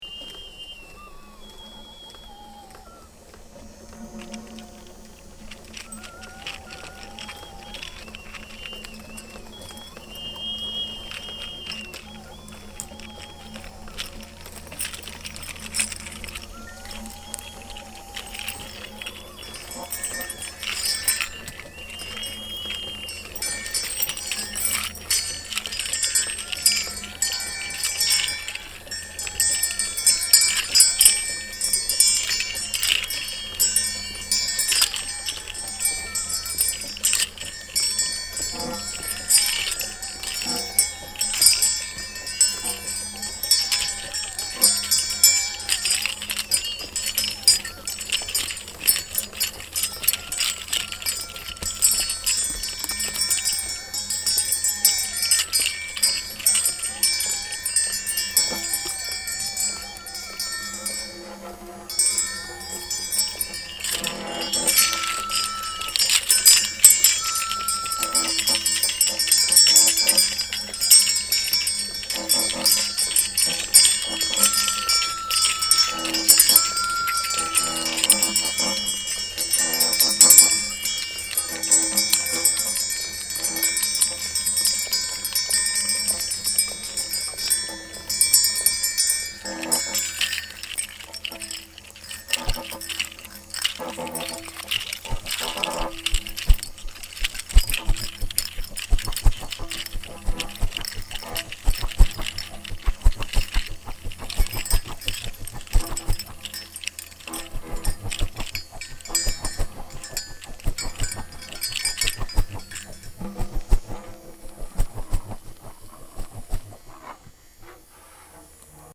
excerpt of KODAMA session in the woods north of La Pommerie
france